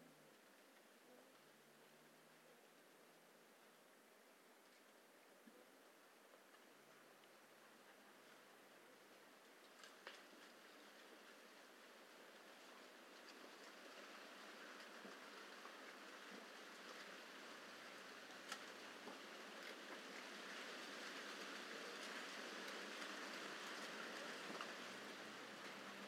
Oak leaves fall in the field moved by wind in winter
Zacatecas, México, 12 February 2022, ~12:00